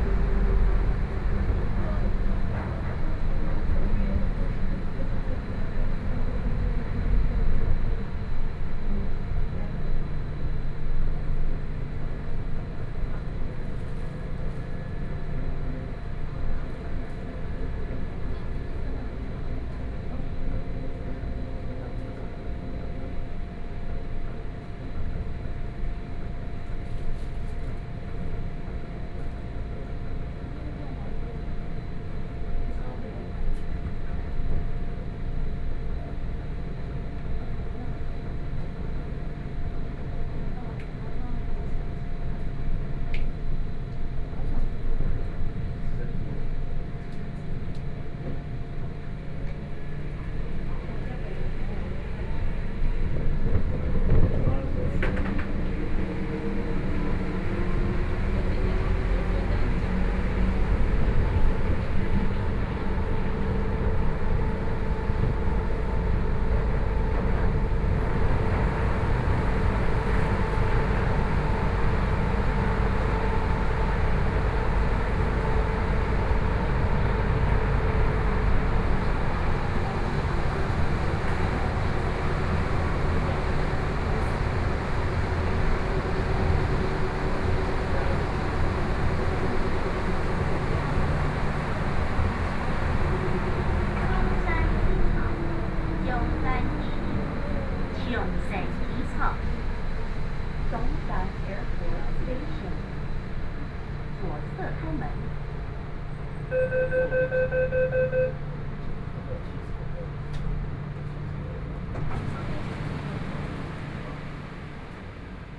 Brown Line (Taipei Metro)
from Zhongxiao Fuxing station to Songshan Airport station, Sony PCM D50 + Soundman OKM II